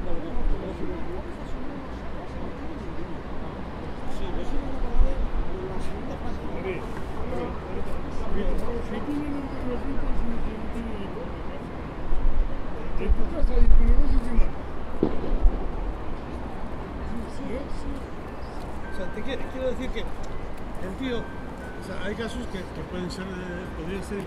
bilbao uribitarte pier
the pier of Uribitarte near the river and in front of the town hall
Biscay, Spain